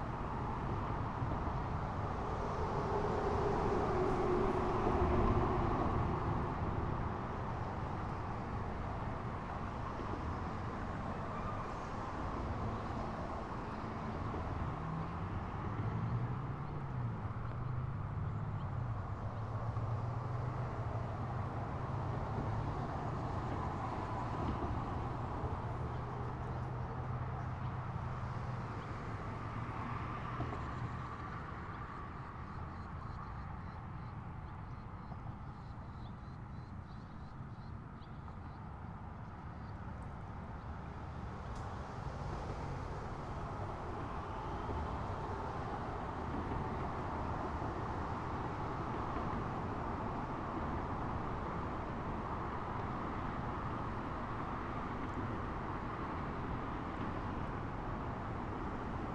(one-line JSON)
{"title": "West Old Town, Albuquerque, NM, USA - Rio Grande at Central Avenue", "date": "2016-08-09 12:42:00", "description": "Sandbank on Rio Grande at Central Avenue. Recorded on Tascam DR-100MKII; Fade in/out 10 seconds Audacity, all other sound unedited.", "latitude": "35.09", "longitude": "-106.68", "altitude": "1512", "timezone": "America/Denver"}